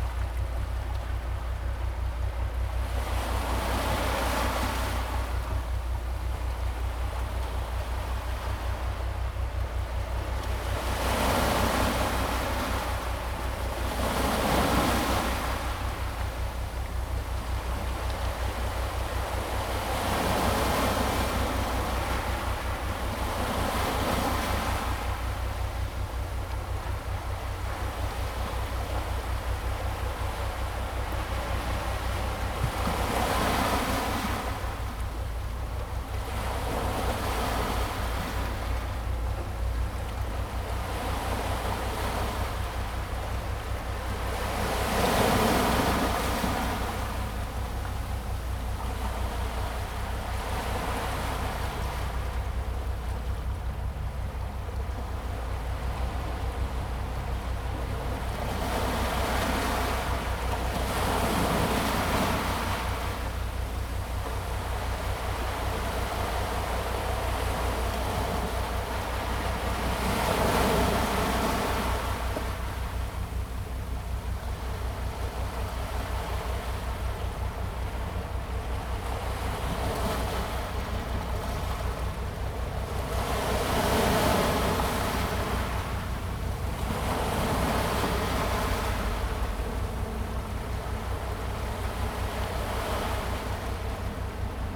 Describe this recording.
Sound of the waves, On the coast, Zoom H2n MS+XY +Sptial Audio